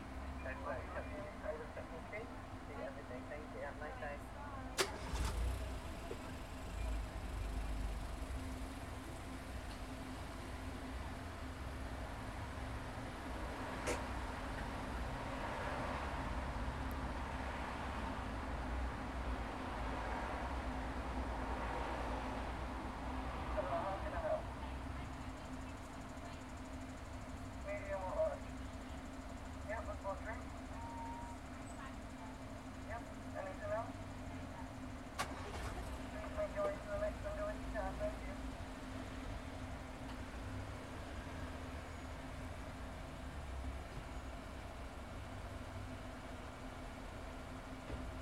McDonald's, Swaythling, Southampton, UK - 007 Drive Thru
7 January, 21:06